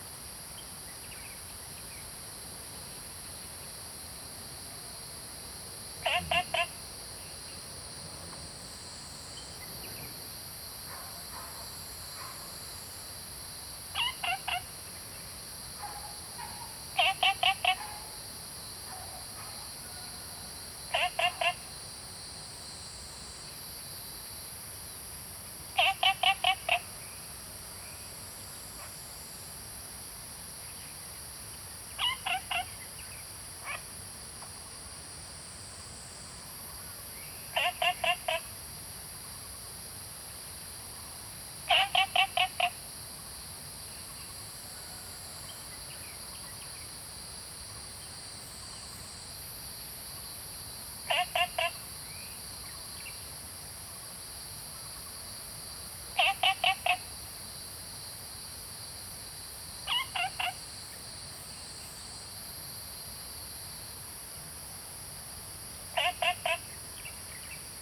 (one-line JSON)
{"title": "青蛙ㄚ 婆的家, Taomi Ln., Puli Township - Frogs chirping", "date": "2015-09-04 06:10:00", "description": "Frogs chirping, Cicada sounds, Birds singing, Small ecological pool\nZoom H2n MS+XY", "latitude": "23.94", "longitude": "120.94", "altitude": "463", "timezone": "Asia/Taipei"}